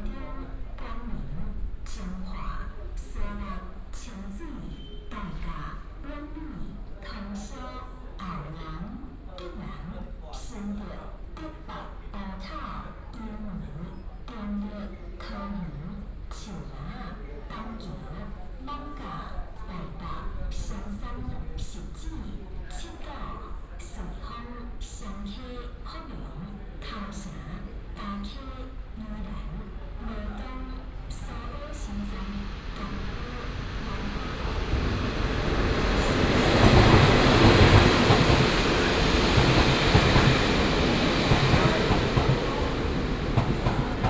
嘉義火車站, Chiayi City - At the station platform
At the station platform
18 April 2017, 15:46